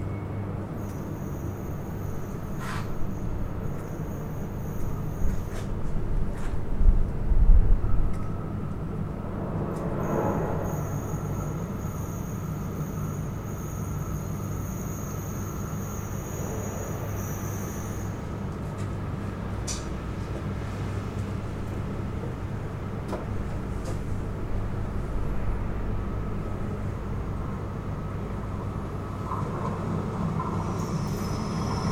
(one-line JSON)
{"title": "duisburg-ruhrort - bahnhof ruhrort", "date": "2010-05-20 23:01:00", "description": "bahnhof duisburg-ruhrort", "latitude": "51.46", "longitude": "6.74", "altitude": "32", "timezone": "Europe/Berlin"}